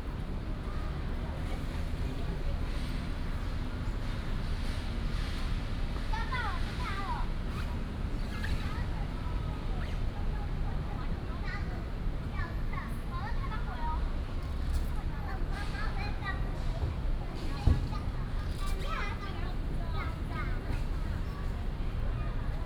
德安公園, Taipei City - in the Park
Children's play area, Birds sound, traffic sound, Swing, .
Taipei City, Taiwan, 25 June 2015